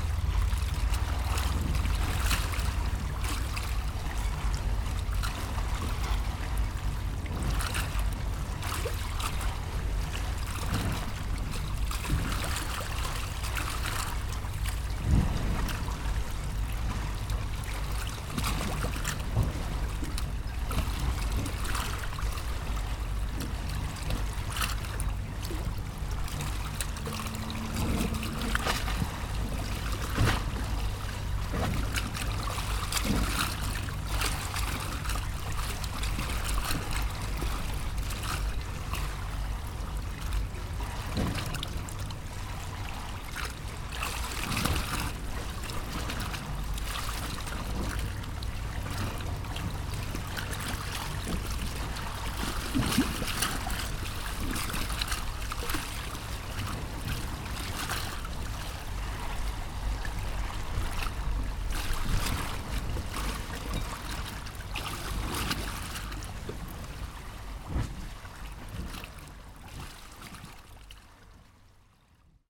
North Sydney Wharf - Violent water lapping at the wharf
Recorded with a pair of DPA 4060s with Earthling Design custom preamps into an H6 handy recorder